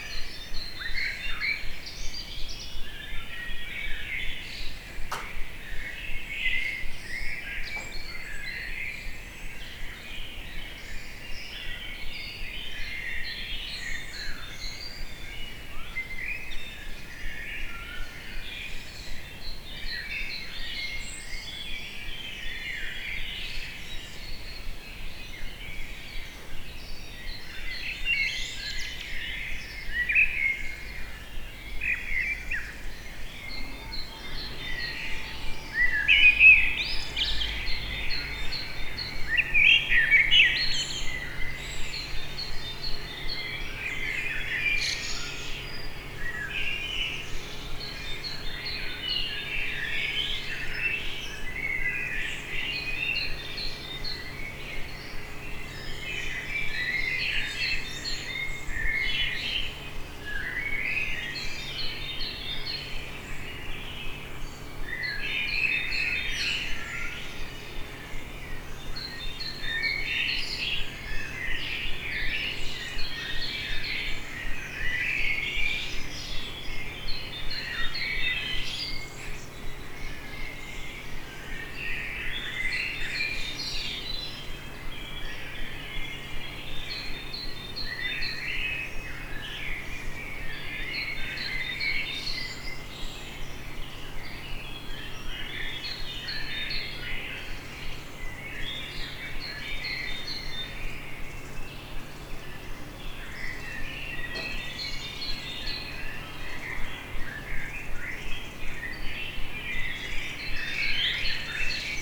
Innstraße, Innsbruck, Österreich - Tropical St. Nikolaus Bird a lot
vogelweide, waltherpark, st. Nikolaus, mariahilf, innsbruck, stadtpotentiale 2017, bird lab, mapping waltherpark realities, kulturverein vogelweide, morgenstimmung vogelgezwitscher, bird birds birds, tropical innsbruck
Innsbruck, Austria, 19 June